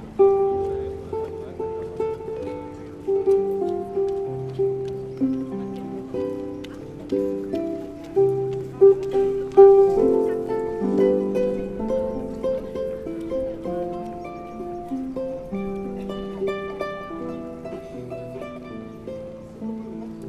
on the stairs of Sacre Coeur a street musician plays on harp Hallelujah
France métropolitaine, France, 30 March 2015, 11:24